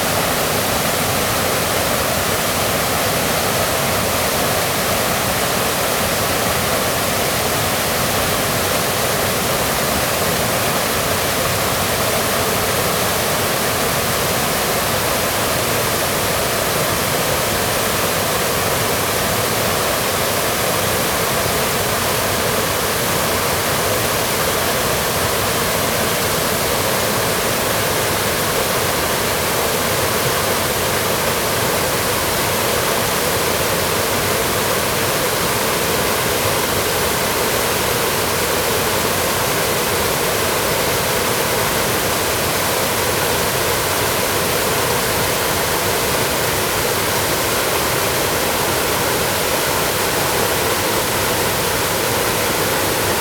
猴洞坑瀑布, 礁溪鄉白雲村, Jiaoxi Township - Waterfalls
Waterfalls
Zoom H2n MS+ XY